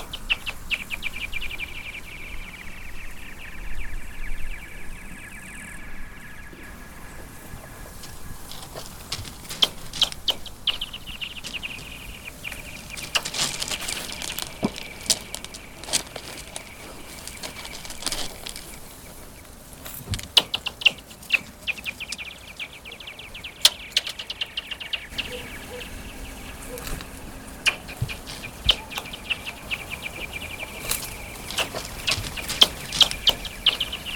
Very thin ice on the lake and the motorcycle in distant landscape
okres Praha-východ, Střední Čechy, Česko, 6 January